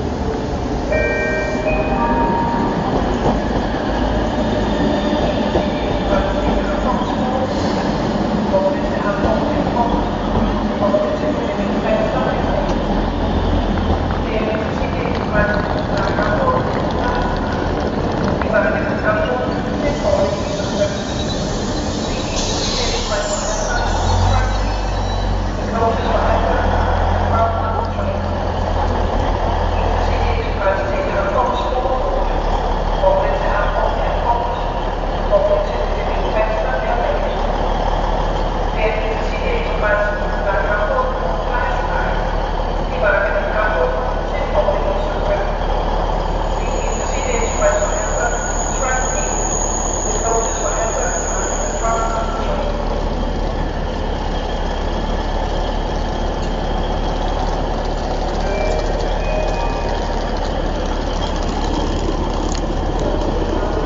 Copenhagen main station, silence before travelling
There is a clear moment of deepest inner silence before stepping on a train, a moment of contemplation about what you leave behind and a moment of greatest curiosity of what you will find along the way.